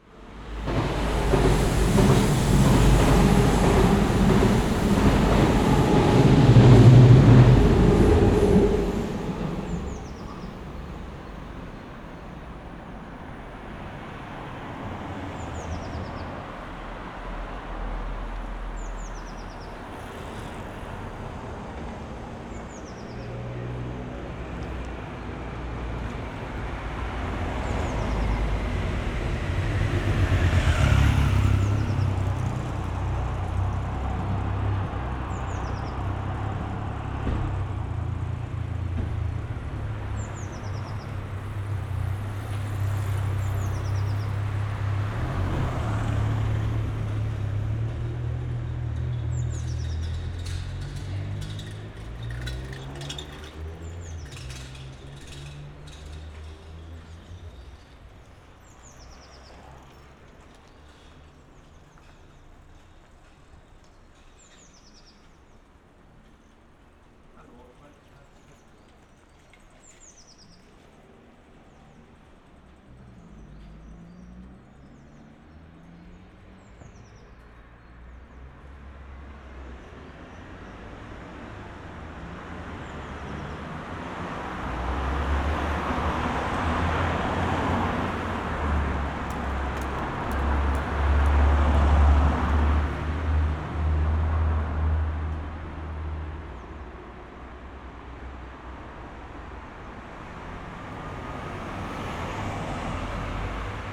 Eifelwall, Köln - multiple sonic traffic pattern
the sonic pattern of traffic at this place is quite interesting: trains of all kind on different levels, cars, bikes, pedestrians. the architecture shapes the sound in a very dynamic way. traffic noise appears and fades quickly, quiet moments in between.
(SD702, Audio Technica BP4025)